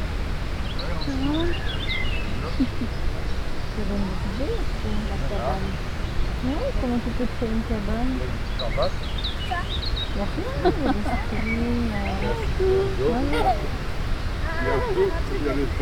Seneffe, the Castle - Le Chateau de Seneffe.
A lot of wind, an exhibition in the park.
19 June, ~17:00